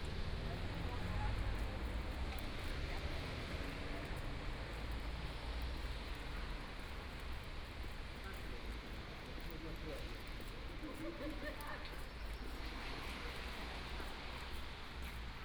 Hermann-Lingg-Straße, 慕尼黑德國 - In the Street
Morning, walking the streets, Traffic Sound, Voice traffic lights
Munich, Germany, 11 May, ~09:00